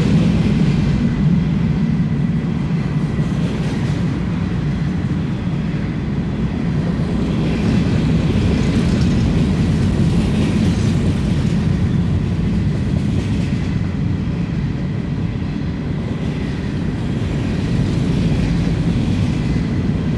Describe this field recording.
Recorded with a pair of DPA 4060s and a Marantz PMD661